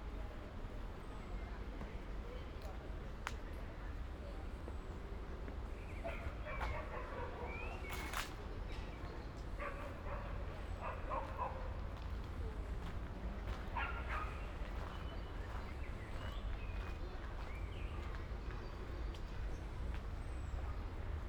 Ascolto il tuo cuore, città. I listen to your heart, city. Chapter LXVIII - Walk in reopened Valentino park in the time of COVID19: soundwalk
"Walk in reopened Valentino park in the time of COVID19": soundwalk
Chapter LXVIII of Ascolto il tuo cuore, città. I listen to your heart, city
Wednesday May 6th 2020. San Salvario district Turin, to reopened Valentino park and back, fifty seven days (but thid day of Phase 2) of emergency disposition due to the epidemic of COVID19
Start at 4:39 p.m. end at 5:36 p.m. duration of recording 56’’40”
The entire path is associated with a synchronized GPS track recorded in the (kmz, kml, gpx) files downloadable here:
Piemonte, Italia, 6 May 2020